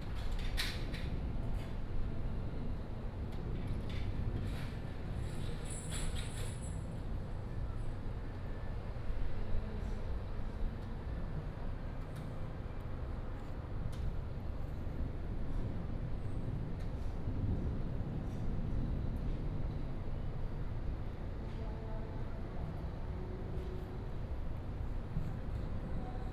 碧潭路, Xindian Dist., New Taipei City - Next to the restaurant
Next to the restaurant, Sound of thunder, traffic sound